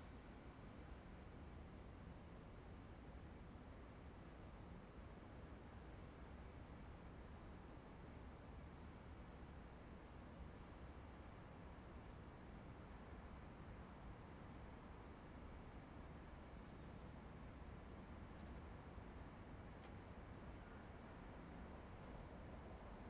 Ascolto il tuo cuore, città. I listen to your heart, city. Several chapters **SCROLL DOWN FOR ALL RECORDINGS** - Terrace at sunrise in the time of COVID19 Soundscape
Chapter XI of Ascolto il tuo cuore, città. I listen to your heart, city
Tuesday, March 17th 2020. Fixed position on an internal terrace at San Salvario district Turin, one week after emergency disposition due to the epidemic of COVID19.
Start at 6:17 a.m. end at 7:17 a.m. duration of recording 60'00''.
Sunset was at 6:39 a.m.
17 March 2020, 06:17